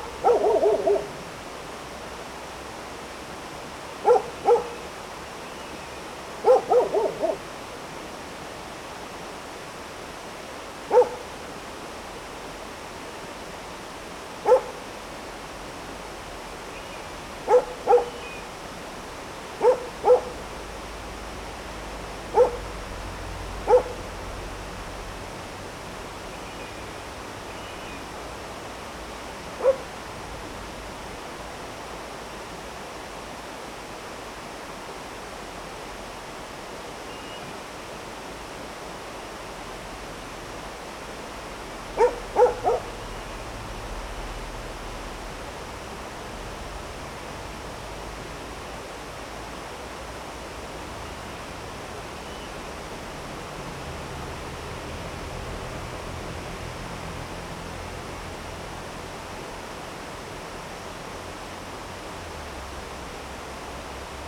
burg/wupper, mühlendamm: wehr - the city, the country & me: weir
weir of the formerly "kameralmühle", barking dog
the city, the country & me: july 24, 2012